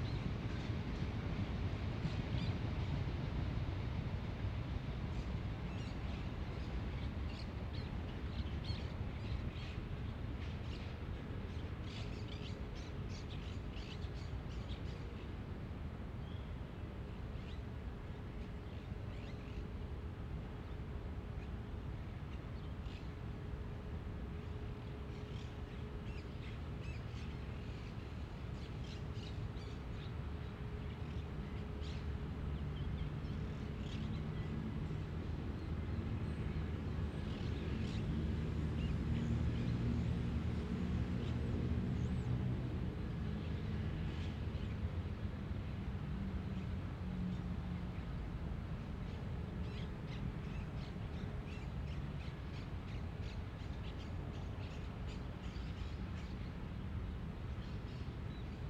Plaza de las Naciones Unidas, CABA, Argentina - Floralis
Los sonidos en los que está inmersa la Floralis Genérica.